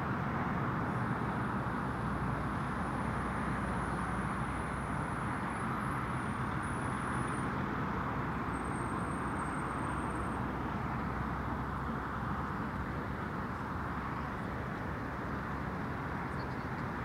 Contención Island Day 57 inner south - Walking to the sounds of Contención Island Day 57 Tuesday March 2nd
The Drive High Street Great North Road
A cold mist in still air
Pulse of traffic
clang of gate
walkers runners dogs
North East England, England, United Kingdom, 2 March 2021, ~11:00